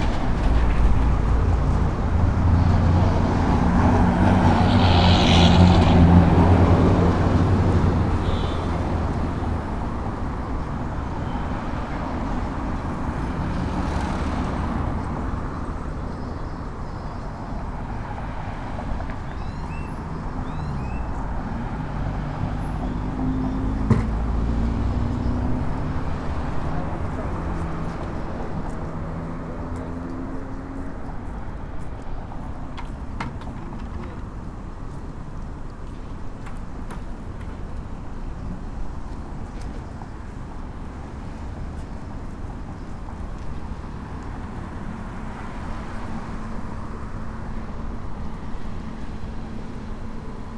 Traffic on Avenida Las Torres after two years of recording during COVID-19 in phase 2 in León, Guanajuato. Mexico. In front of the Plaza Mayor shopping center.
I made this recording on june 9th, 2022, at 5:33 p.m.
I used a Tascam DR-05X with its built-in microphones and a Tascam WS-11 windshield.
Original Recording:
Type: Stereo
Esta grabación la hice el 9 de junio 2022 a las 17:33 horas.